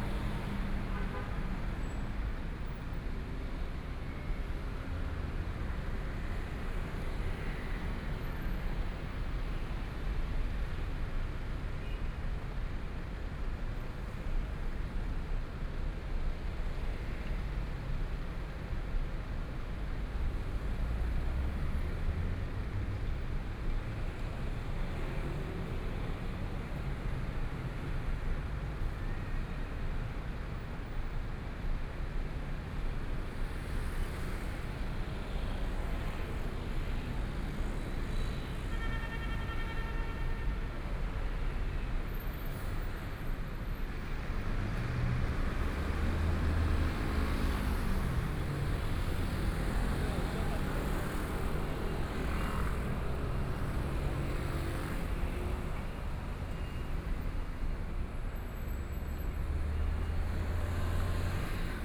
Xinsheng N. Rd., Zhongshan Dist. - walking on the Road
walking on the Road, Traffic Sound
Please turn up the volume
Binaural recordings, Zoom H4n+ Soundman OKM II